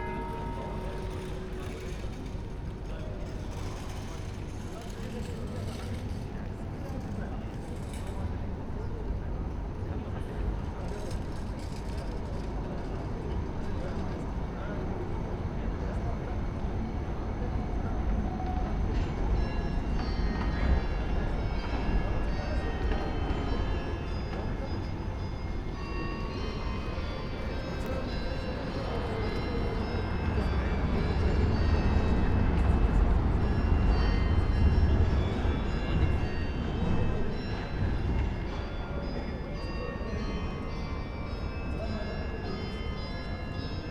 Marktplatz, Halle (Saale), Deutschland - Sunday evening ambience, bells
Sunday evenig at Marktplatz, Halle. No cars around, surprising. Sound of trams, 6pm bells
(Sony PCM D50, Primo EM172)
Halle (Saale), Germany, 23 October 2016